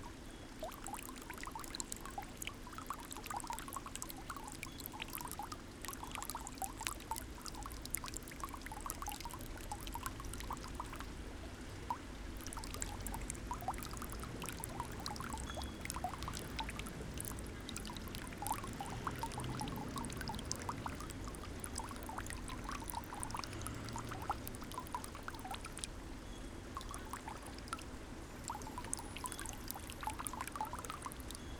Casa del Reloj, fountain, thin stream
21 November 2010, ~14:00